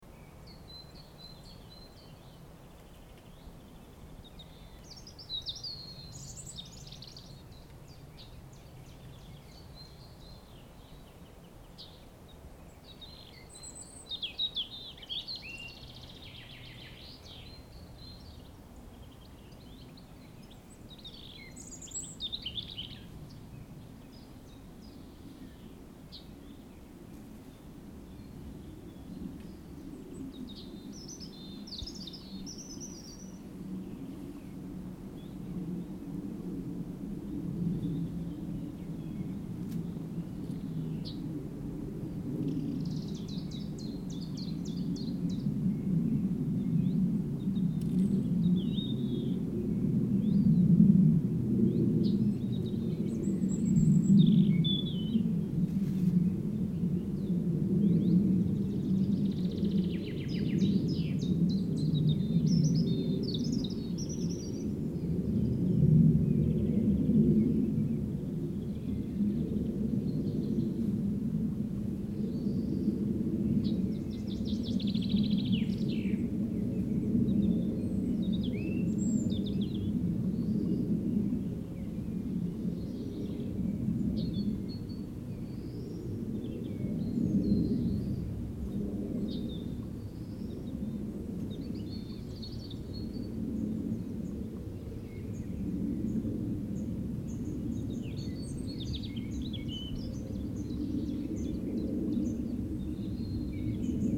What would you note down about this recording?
Forest birds singing, trees rustling, then a plane humming above ruins it all. Recorded with Zoom H2n, 2CH, deadcat, handheld.